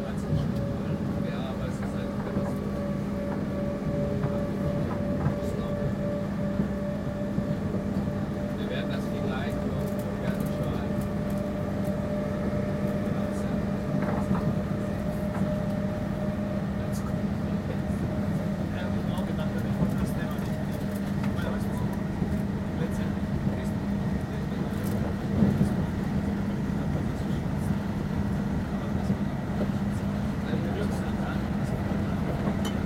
stansted express
train from stansted airport to london city.
recorded july 18, 2008.